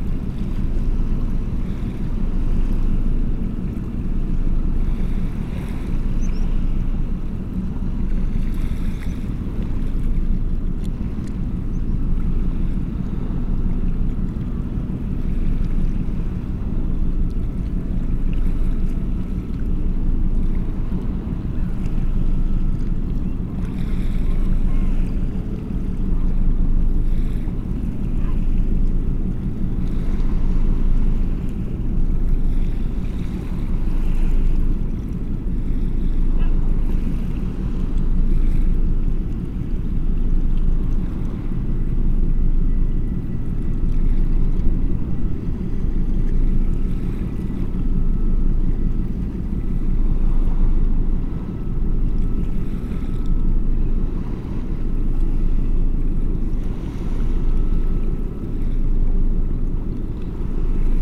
Quillebeuf-sur-Seine, France - Boat on the Seine river
A big boat transporting gas is passing by on the Seine river.